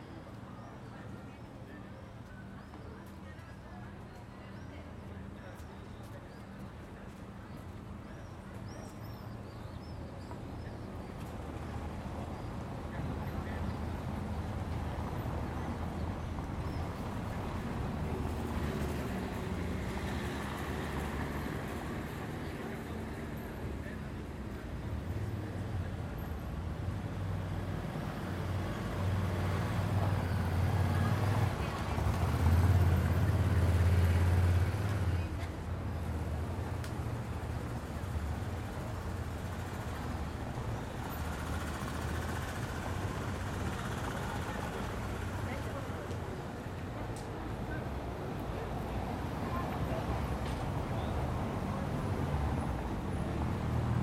Βασ. Κωνσταντίνου, Ξάνθη, Ελλάδα - Antika Square/ Πλατεία Αντίκα- 20:30
Mild traffic, car honks, people passing by, talking.
Περιφερειακή Ενότητα Ξάνθης, Περιφέρεια Ανατολικής Μακεδονίας και Θράκης, Αποκεντρωμένη Διοίκηση Μακεδονίας - Θράκης, 12 May 2020, 20:30